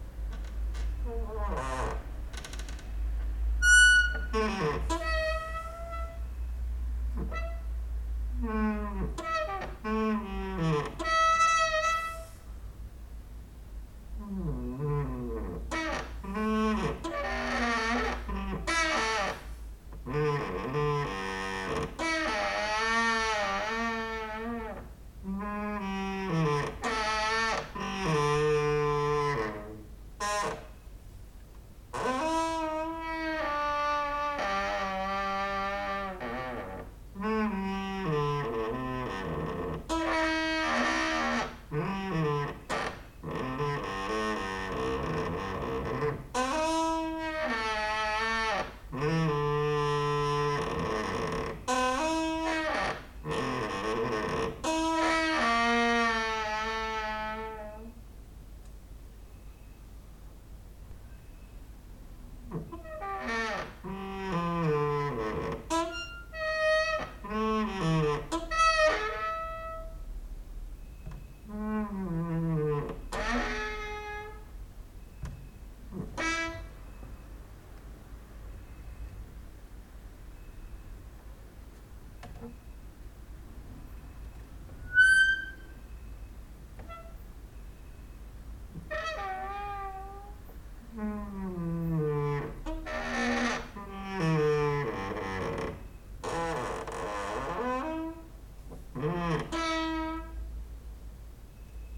{
  "title": "Mladinska, Maribor, Slovenia - late night creaky lullaby for cricket/4",
  "date": "2012-08-10 00:20:00",
  "description": "cricket outside, exercising creaking with wooden doors inside",
  "latitude": "46.56",
  "longitude": "15.65",
  "altitude": "285",
  "timezone": "GMT+1"
}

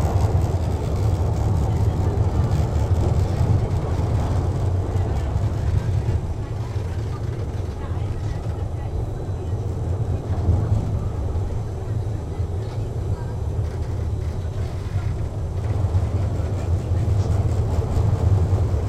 {
  "title": "Schwebebahn: Pestalozzistr.",
  "description": "Die Wuppertaler Schwebebahn (offizieller Name Einschienige Hängebahn System Eugen Langen) ist ein um 1900 von MAN konstruiertes und erbautes, 1901 freigegebenes und bis heute in Betrieb stehendes Nahverkehrssystem im Stadtbereich von Wuppertal. Das System war ursprünglich auch für viele andere Städte geplant, so existierten beispielsweise Pläne für Schwebebahnen in Hamburg, Berlin, London und den deutschen Kolonialgebieten.",
  "latitude": "51.25",
  "longitude": "7.12",
  "altitude": "144",
  "timezone": "GMT+1"
}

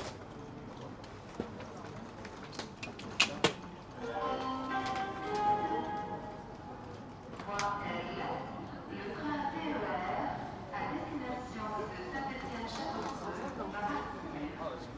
{
  "title": "Gare de la Part-Dieu, Lyon, France - Platform ambience at the station",
  "date": "2022-07-24 13:57:00",
  "description": "Trains annoucement, TGV coming on the Platform.\nTech Note : Sony PCM-M10 internal microphones.",
  "latitude": "45.76",
  "longitude": "4.86",
  "altitude": "171",
  "timezone": "Europe/Paris"
}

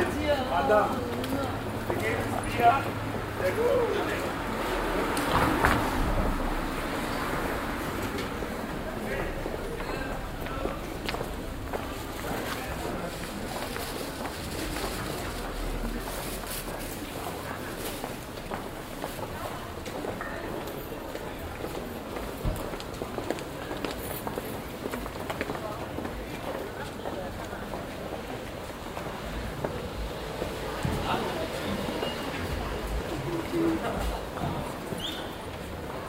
{
  "title": "cologne, ehrenstrasse, passanten",
  "date": "2008-06-01 09:12:00",
  "description": "soundmap: köln/ nrw\npassanten, musiken aus ladenlokalen und verkehr auf der einkaufszone ehrenstrasse, mittags\nproject: social ambiences/ listen to the people - in & outdoor nearfield recordings",
  "latitude": "50.94",
  "longitude": "6.94",
  "altitude": "58",
  "timezone": "Europe/Berlin"
}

